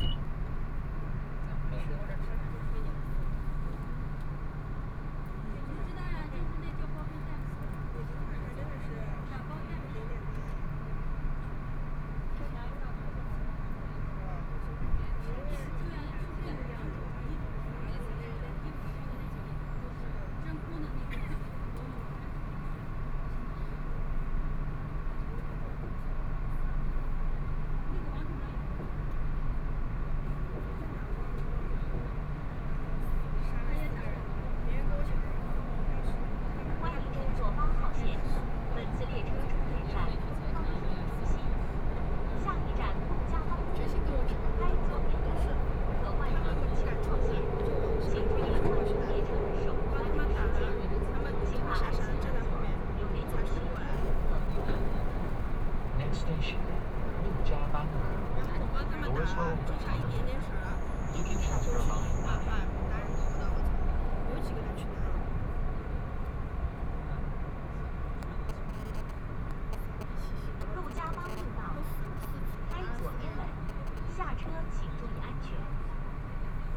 Huangpu District, Shanghai - Line 8 (Shanghai Metro)
from Laoximen Station to South Xizang Road Station, Binaural recording, Zoom H6+ Soundman OKM II